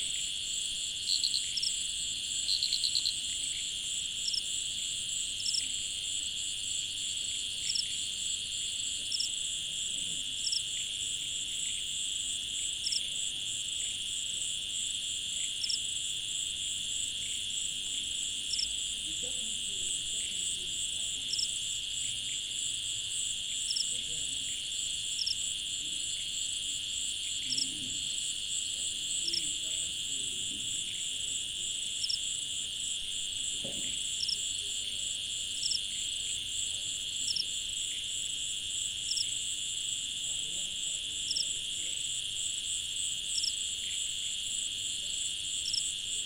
Koforidua, Ghana - Suburban Ghana Soundscapes 1
A part of field recordings for soundscape ecology research and exhibition.
Rhythms and variations of vocal intensities of species in sound.
Recording format AB with Rode M5 MP into ZOOM F4.
Date: 19.04.2022.
Time: Between 10 and 12 PM.